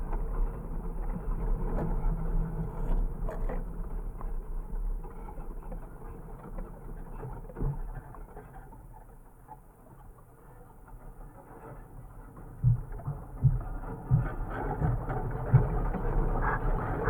Bonaforth, Grabeland, Deutschland - BonaforthFence160718
2 piezo discs attached on wires of a fence. Blades of grass moved by the wind touching the wires, vibrations and something which sounds like the call of an animal. Recorded on a SoundDevices 702 with the use of HOSA MIT-129 transformers. #WLD2016
Hann. Münden, Germany